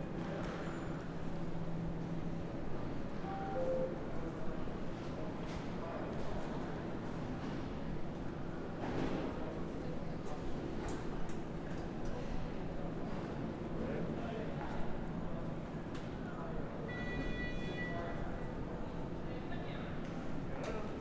The last hall in a long row of entrance halls at Frankfurt Airport, FRA. Compared to may 2020 the whole airport was busy, even if Terminal 2 was still closed. A lot of travellers to Turkey gathered and are audible, two workers are discussing a construction. The whole hall rather reminds of a mixture of a factory and a modern, concrete church.
Hessen, Deutschland